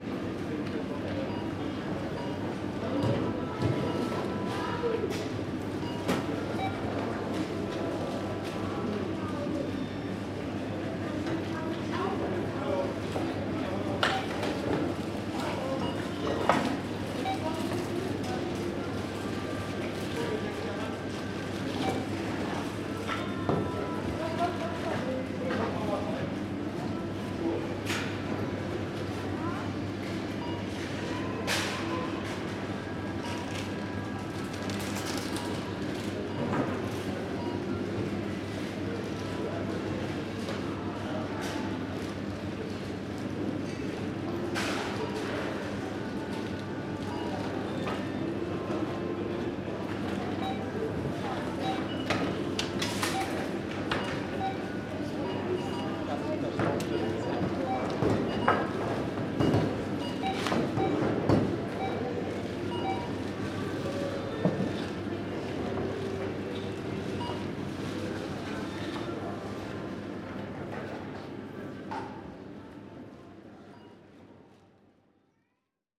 Ackerstraße, Mitte, Berlin, Deutschland - Ackerhalle, Ackerstraße, Berlin - supermarket ambience
Ackerhalle, Ackerstraße, Berlin - supermarket ambience. [I used an MD recorder with binaural microphones Soundman OKM II AVPOP A3]